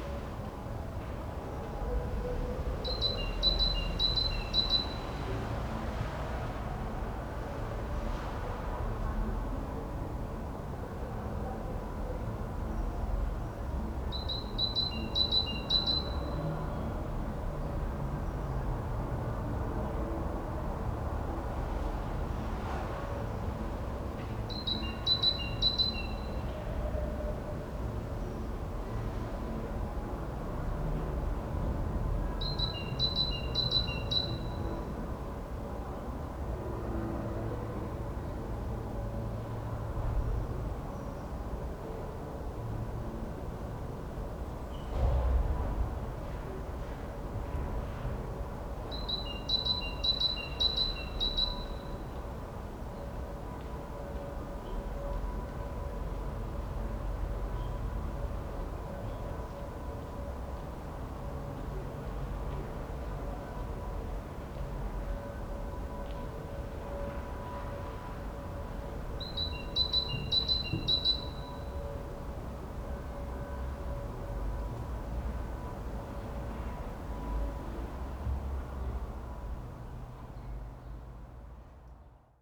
Berlin Bürknerstr., backyard window - Tuesday late afternoon, a bird
a solitude bird, sounds of nearby market, distant church bells, city sounds from afar.
(Sony PCM D50)
Berlin, Germany